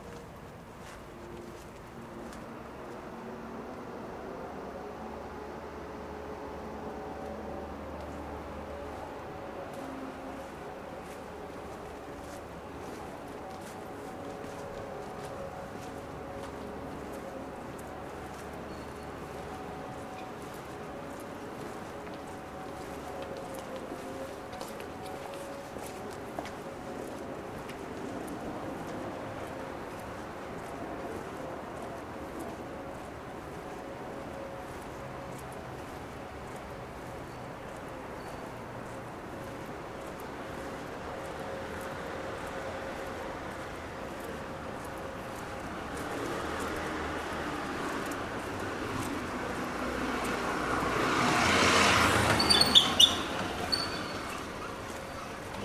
Fullmoon Nachtspaziergang Part VIII
Fullmoon on Istanbul, meeting the garbagemen on their duty.
23 October 2010, ~23:00